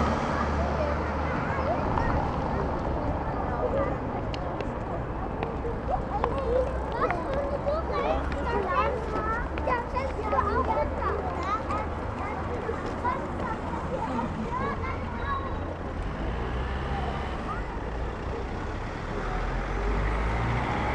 Berlin: Vermessungspunkt Maybachufer / Bürknerstraße - Klangvermessung Kreuzkölln ::: 29.03.2011 ::: 10:15